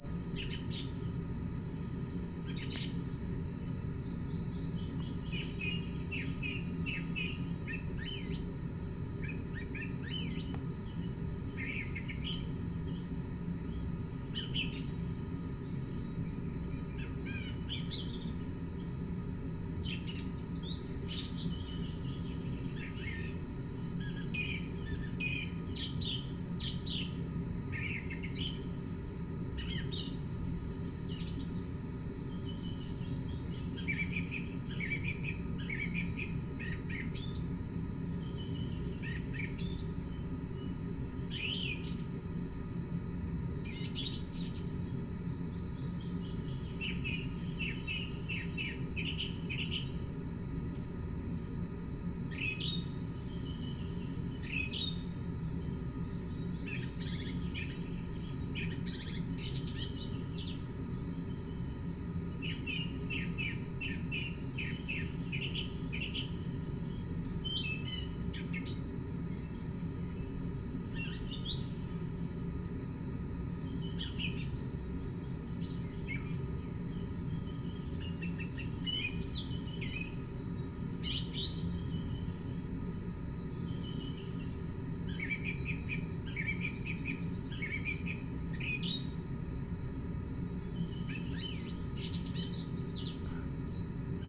Helsinki Airport (HEL), Vantaa, Finland - Smoking room
Waiting for flight to Reykjavik, smoking room with artificial nature ambience. (XY, Sony PCM D50)